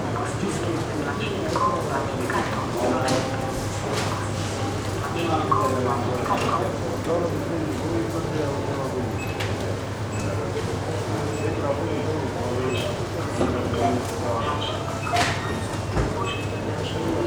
Recorded on Zoom H4n + Rode NTG 1, 26.10.
Jihovýchod, Česko